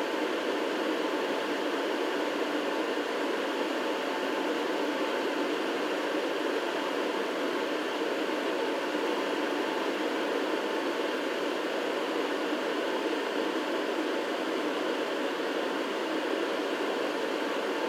Castell de Sant Ferran, Alicante, Spain - (17) Ventillator on the Castell de Sant Ferran
Stereo recording of the ventillator on the Castell de Sant Ferran
recorded with Zoom H2n
sound posted by Katarzyna Trzeciak